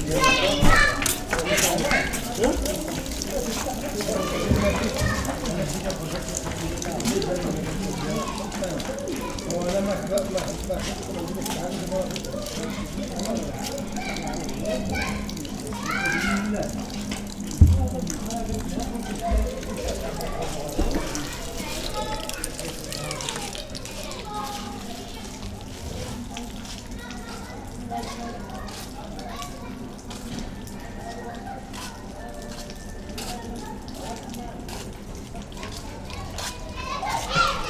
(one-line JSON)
{"title": "Essaouira, Derb Agadir", "date": "2006-09-01 18:50:00", "description": "Africa, Morocco, Essaouira, street", "latitude": "31.51", "longitude": "-9.77", "altitude": "9", "timezone": "Africa/Casablanca"}